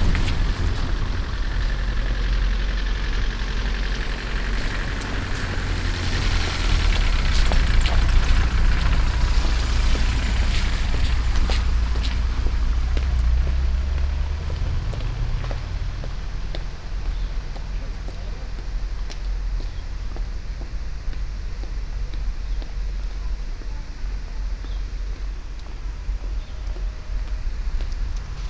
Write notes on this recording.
a humming house ventilation, passengers and some cars passing by the snow covered small street, international city scapes and social ambiences